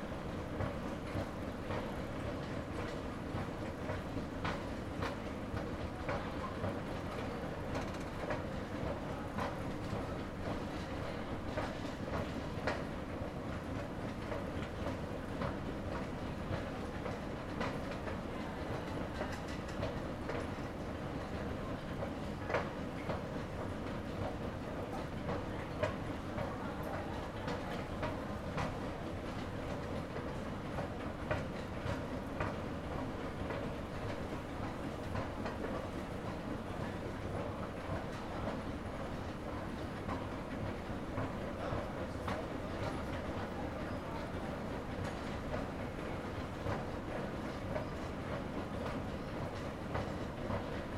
A walk by an escalator to the platform of the metro station of line M3, a train is leaving, another escalator is audible. The old ones are faster in Budapest than in most cities.